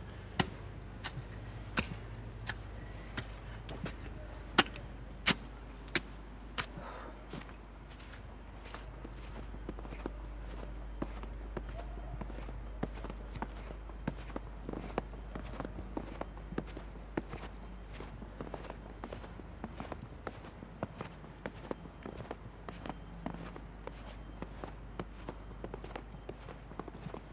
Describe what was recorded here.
Snow: walk up Clemens Holzmeister Stiege and read the Trakl Poem "Am Mönchsberg"